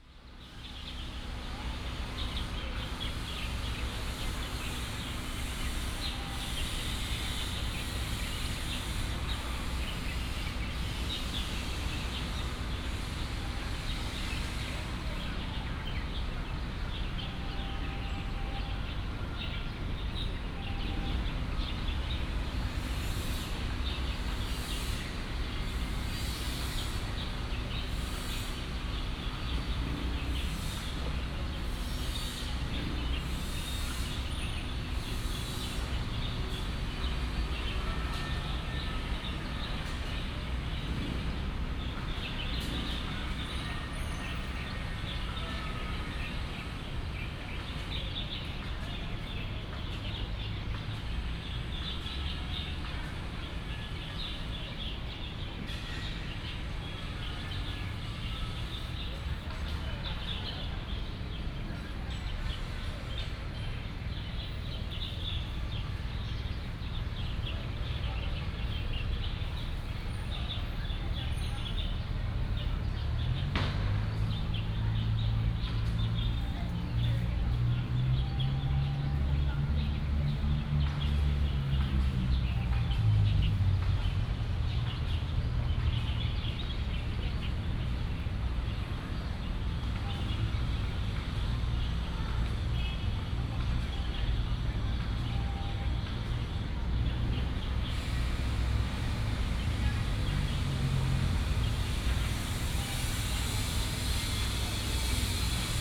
{"title": "同安親子公園, Taoyuan Dist., Taoyuan City - in the park", "date": "2016-11-17 15:38:00", "description": "Traffic sound, in the park, Construction sound, Many sparrows", "latitude": "25.02", "longitude": "121.30", "altitude": "84", "timezone": "Asia/Taipei"}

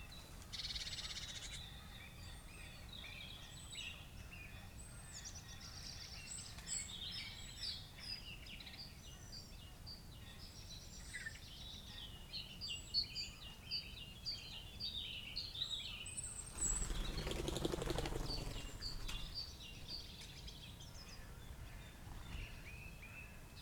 {"title": "The Vicarage, Helperthorpe, Malton, UK - Dawn chorus in February ...", "date": "2018-02-04 07:00:00", "description": "Dawn chorus in February ... open lavalier mics on T bar strapped to bank stick ... bird song and calls from ... crow ... rook ... jackdaw ... pheasant ... robin ... tree sparrow ... background noise from traffic etc ...", "latitude": "54.12", "longitude": "-0.54", "altitude": "85", "timezone": "Europe/London"}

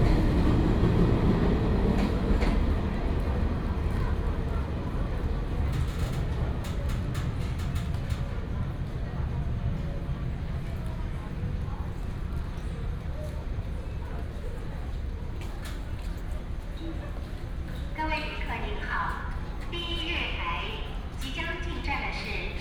{"title": "新竹火車站, East Dist., Hsinchu City - in the station platform", "date": "2017-04-06 19:11:00", "description": "in the station platform, Station information broadcast", "latitude": "24.80", "longitude": "120.97", "altitude": "25", "timezone": "Asia/Taipei"}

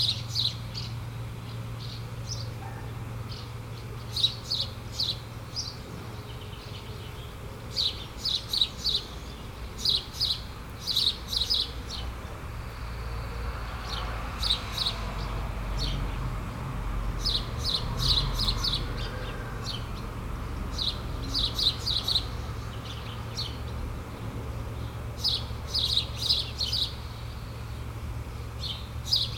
{"title": "Saint-Martin-de-Nigelles, France - The sparrows farm", "date": "2018-07-19 09:10:00", "description": "Just near a farm, sparrows shouting. Rural atmosphere, cars, tractors, planes.", "latitude": "48.61", "longitude": "1.61", "altitude": "104", "timezone": "Europe/Paris"}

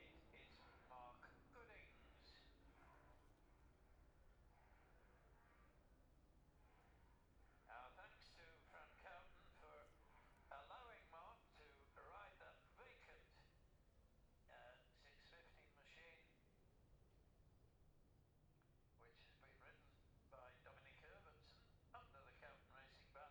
{"title": "Jacksons Ln, Scarborough, UK - gold cup 2022 ... twins ...", "date": "2022-09-16 10:58:00", "description": "the steve henson gold cup 2022 ... twins practice ... dpa 4060s on t-bar on tripod to zoom f6 ...", "latitude": "54.27", "longitude": "-0.41", "altitude": "144", "timezone": "Europe/London"}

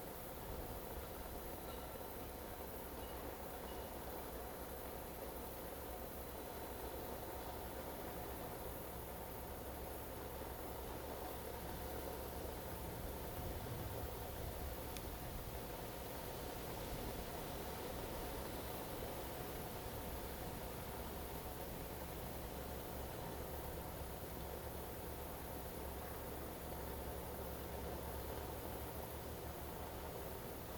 {"title": "長興, Fuxing Dist., Taoyuan City - sound of birds", "date": "2017-08-14 15:24:00", "description": "Near the reservoir in the woods, The sound of birds, Zoom H2n MS+XY", "latitude": "24.80", "longitude": "121.31", "altitude": "288", "timezone": "Asia/Taipei"}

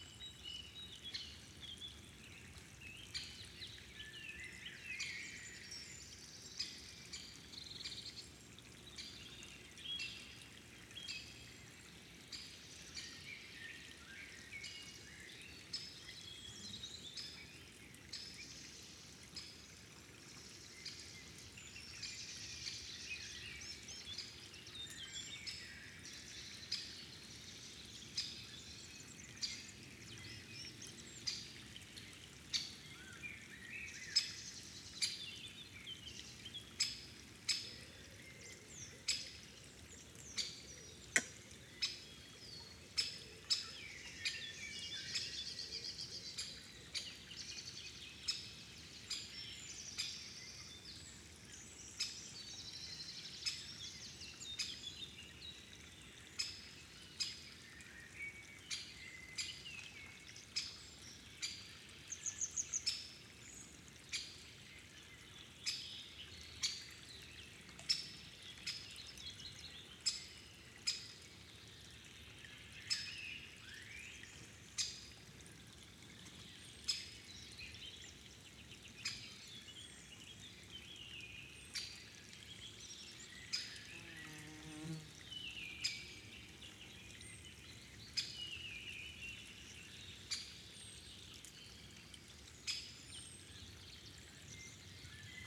{"title": "Forest Lake''Höllsee''Nature Park Haßberge Germany - Forest lake on a summer evening", "date": "2022-06-14 20:00:00", "description": "Deep in the Forest you will find this wonderful place. Surrounded by moss-covered trees, swampy wet meadows and numerous smaller watercourses and streams which flow from the higher areas into this forest lake. This nature reserve offers the vital habitat for many plants and creatures here in this area. The ''Höllsee ''As the locals call it, is an important breeding ground for some endangered animal species.\nSetup:\nEarSight mic's stereo pair from Immersive Soundscapes", "latitude": "50.20", "longitude": "10.48", "altitude": "372", "timezone": "Europe/Berlin"}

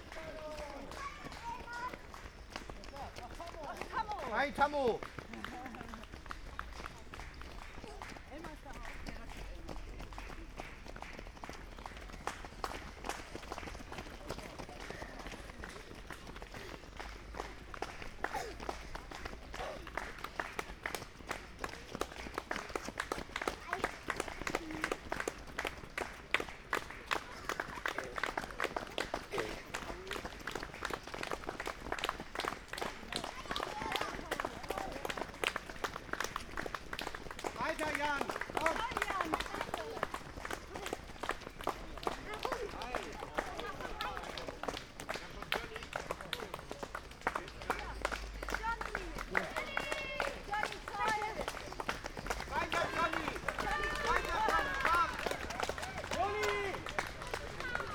{"title": "Eversten Holz, Oldenburg - Brunnenlauf, kids marathon", "date": "2016-06-05 10:05:00", "description": "a flock of kids running-by, people clapping, forest ambience\n(Sony PCM D50, Primo EM172)", "latitude": "53.14", "longitude": "8.20", "altitude": "13", "timezone": "Europe/Berlin"}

